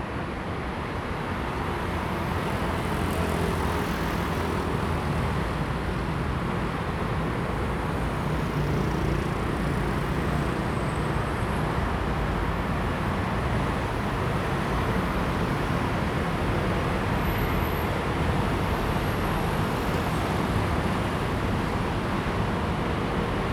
Civic Boulevard, Taipei City - Traffic Sound
Traffic Sound
Zoom H2n MS+XY